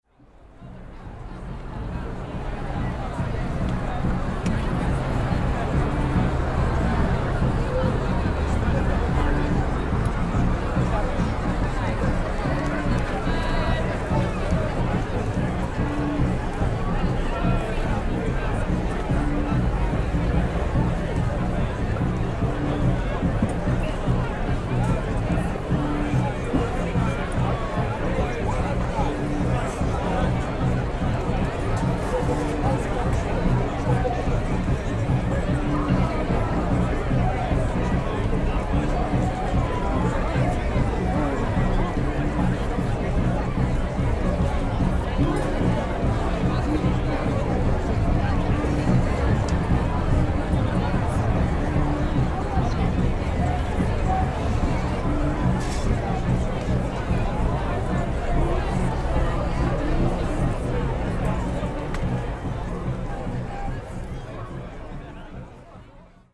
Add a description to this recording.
Beach bar, summer, early evening, Brighton.